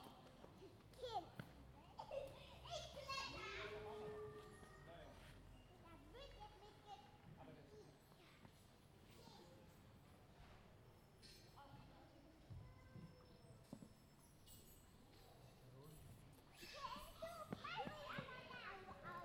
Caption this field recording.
It was Sunday morning during the Corona time. Kids were playing carefree in their universes. Parents were sitting next by chatting among themselves. Recorded with Zoom H2n.